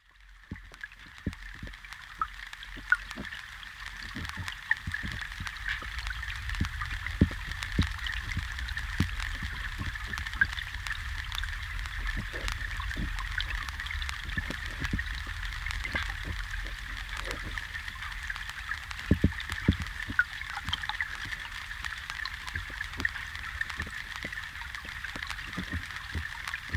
rain comes. we stand under the bridge and listen underwaters of river Neris. shits and trashs flows and hit my hydrophone...
Vilniaus miesto savivaldybė, Vilniaus apskritis, Lietuva, 28 September 2019